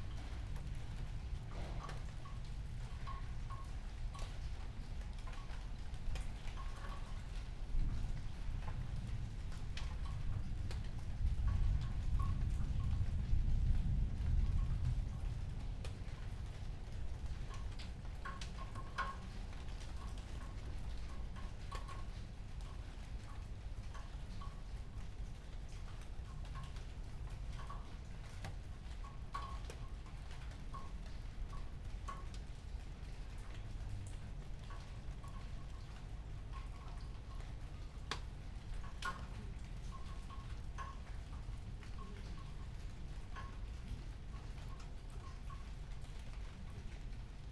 Berlin Bürknerstr., backyard window - distant thunder, light rain
distant thunder and light rain on a warm late summer night
(SD702, MKH802)